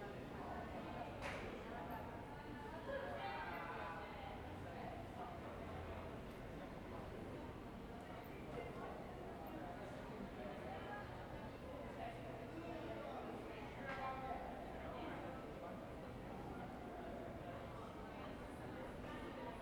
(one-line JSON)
{"title": "Ascolto il tuo cuore, città, I listen to your heart, city. Several chapters **SCROLL DOWN FOR ALL RECORDINGS** - Three ambiances April 25 in the time of COVID19 Soundscape", "date": "2020-04-25 11:00:00", "description": "\"Three ambiances April 25 in the time of COVID19\" Soundscape\nChapter LVI of Ascolto il tuo cuore, città. I listen to your heart, city\nSaturday April 25th 2020. Fixed position on an internal terrace at San Salvario district Turin, forty six days after emergency disposition due to the epidemic of COVID19.\nThree recording realized at 11:00 a.m., 6:00 p.m. and 10:00 p.m. each one of 4’33”, in the frame of the project (R)ears window METS Cuneo Conservatory) (and maybe Les ambiances des espaces publics en temps de Coronavirus et de confinement, CRESSON-Grenoble) research activity.\nThe thre audio samplings are assembled here in a single audio file in chronological sequence, separated by 7'' of silence. Total duration: 13’53”", "latitude": "45.06", "longitude": "7.69", "altitude": "245", "timezone": "Europe/Rome"}